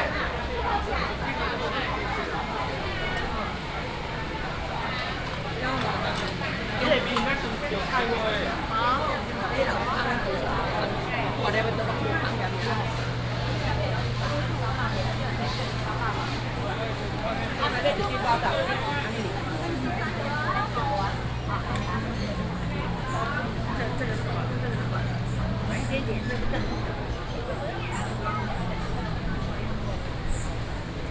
南屯市場, Nantun Dist., Taichung City - Traditional Markets
walking in the Traditional Markets, traffic sound, vendors peddling, Binaural recordings, Sony PCM D100+ Soundman OKM II